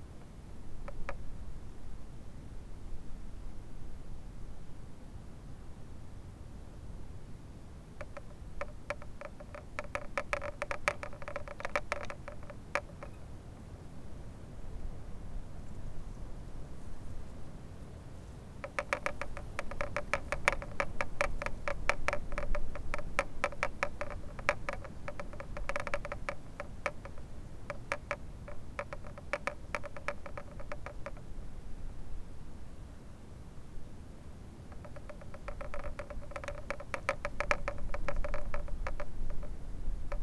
geesow: salveymühle - the city, the country & me: fence
stormy evening, fence rattling in the wind
the city, the country & me: january 3, 2014
Gartz, Germany, 3 January 2014